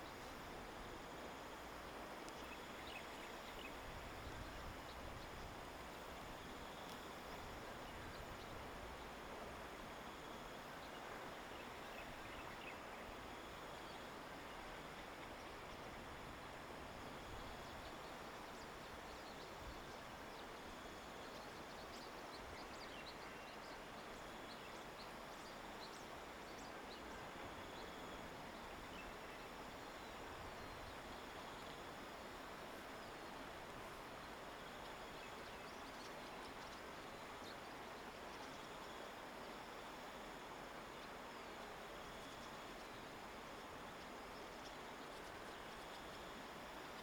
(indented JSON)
{
  "title": "大武鄉加津林溪, Taitung County - On the river bank",
  "date": "2018-04-13 16:48:00",
  "description": "On the river bank, Bird call, Stream sound, Dog barking\nZoom H2n MS+XY",
  "latitude": "22.41",
  "longitude": "120.92",
  "altitude": "43",
  "timezone": "Asia/Taipei"
}